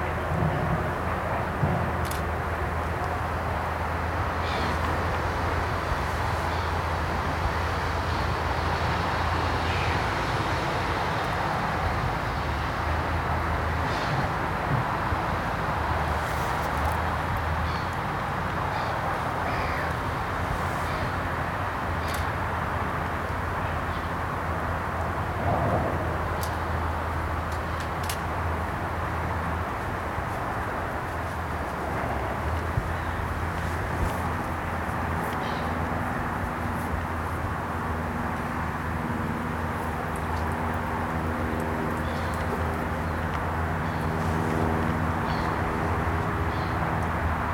Limerick City, Co. Limerick, Ireland - walking south towards the wetlands
road traffic noise from Condell Road, propeller aircraft overhead, birds
18 July 2014, 14:26